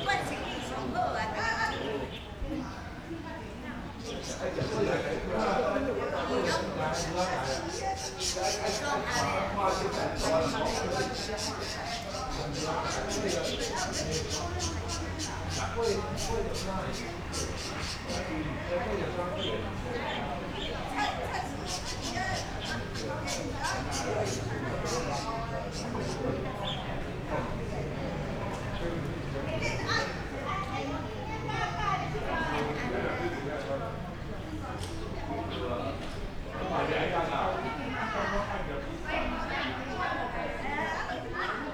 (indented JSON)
{
  "title": "Aly., Ln., Wuhua St., Sanchong Dist. - Traditional old community",
  "date": "2012-03-15 08:14:00",
  "description": "Traditional old community, Many older people in the chat, Birds singing, Traffic Sound\nRode NT4+Zoom H4n",
  "latitude": "25.08",
  "longitude": "121.49",
  "altitude": "15",
  "timezone": "Asia/Taipei"
}